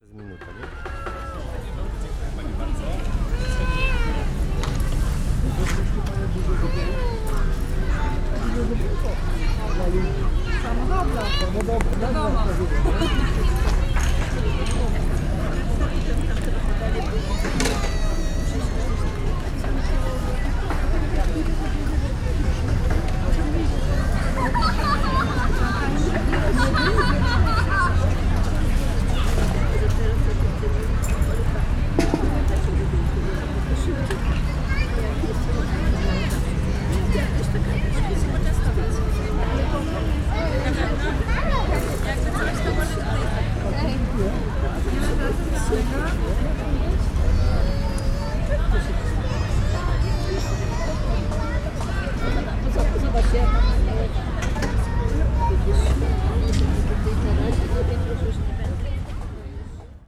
Gdańsk, Polska - IKM picnic 1
Dźwięki nagrano podczas pikniku zrealizowanego przez Instytut Kultury Miejskiej.
Nagrania dokonano z wykorzystaniem mikrofonów kontaktowych.
11 August, 13:00